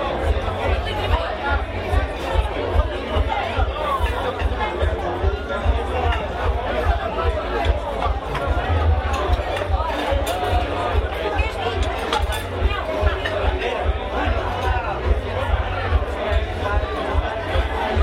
"desert" restaurant: Naso, Miranda do Douro, PT. A.Mainenti
Portugal, 10 September 2009